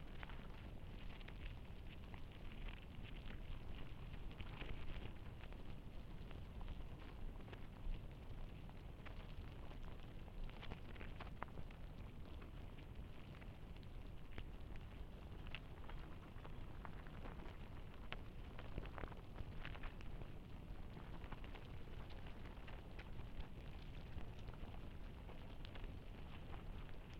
Landgoed Denk en Werk, Spankeren, Netherlands - Anthill in Bockhorsterbos
Anthill recorded with two hydrophones.